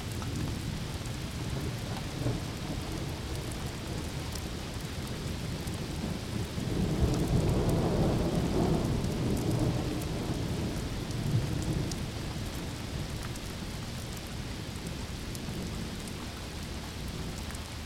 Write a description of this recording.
Thunderstorm in the harbour of Antwerp, Belgium. Zoom H2.